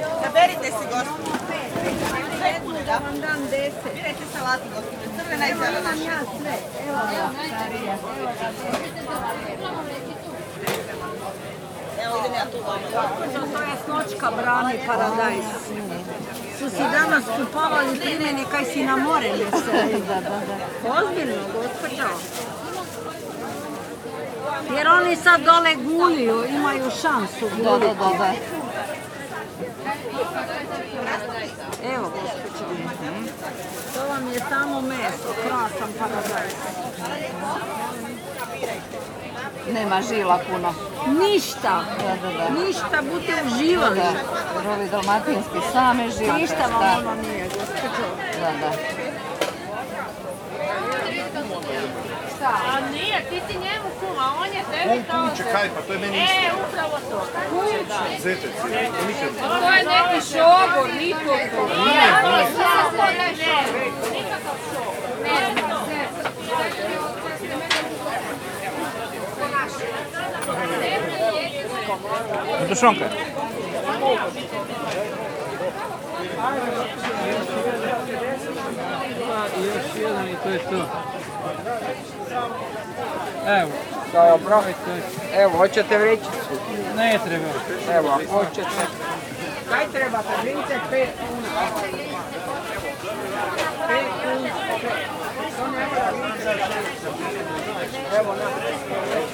Market Dolac, Zagreb: La joie de vivre
voices from vicinity, socialization thanks to fruits&vegetables